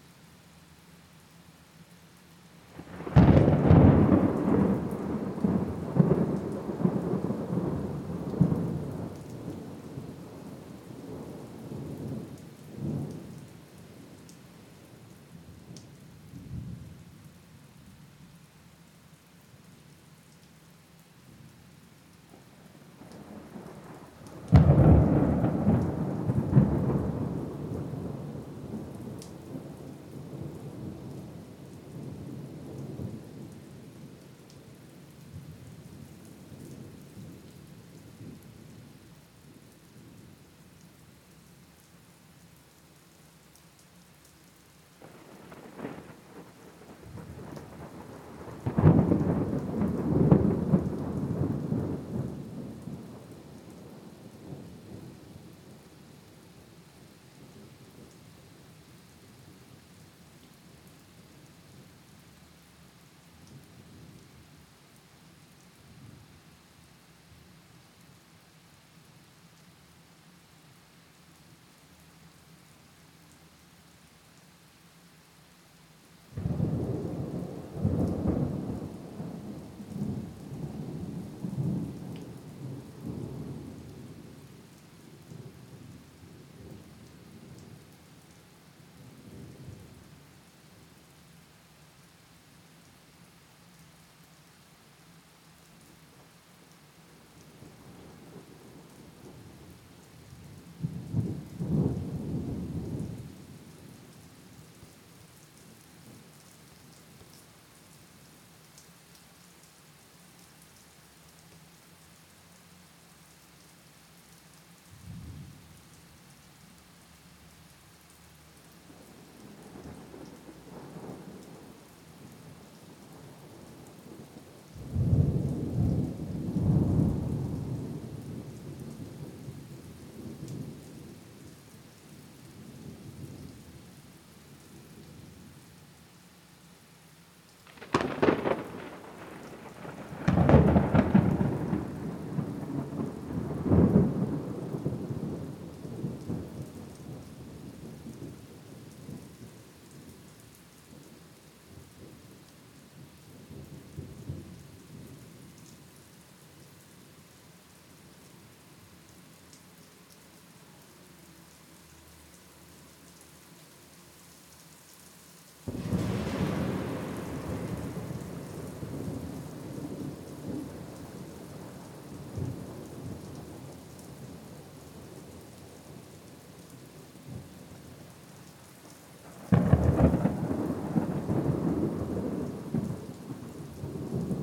Roof Top Art, Design and Media Building NTU - ADM monsoon Thunder and Rain
Monsoon thunder and rain recorded on the grass roof of the ADM building, NTU Singapore Stereo MS.